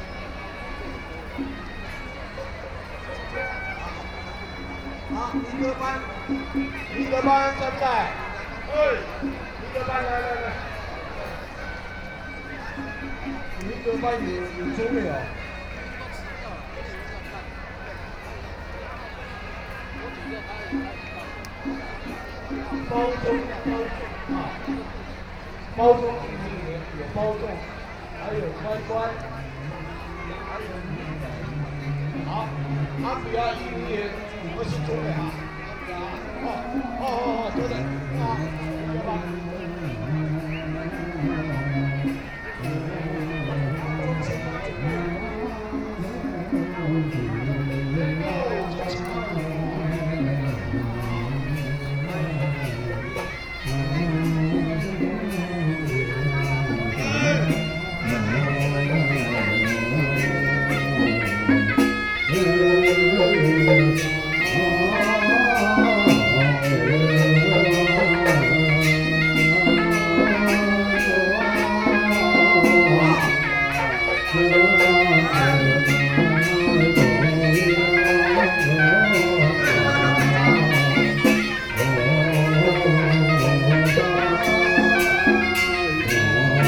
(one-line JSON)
{"title": "Taipei City Hakka Cultural Park - walk in the Park", "date": "2013-10-20 15:30:00", "description": "Hakka Culture Festival, Binaural recordings, Sony PCM D50 + Soundman OKM II", "latitude": "25.02", "longitude": "121.52", "altitude": "12", "timezone": "Asia/Taipei"}